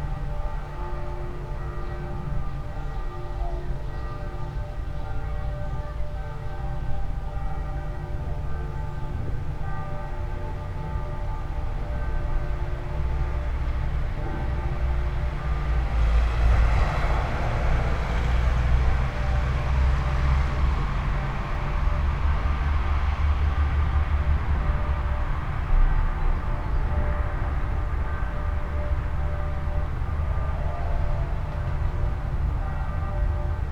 all the mornings of the ... - aug 4 2013 sunday 08:56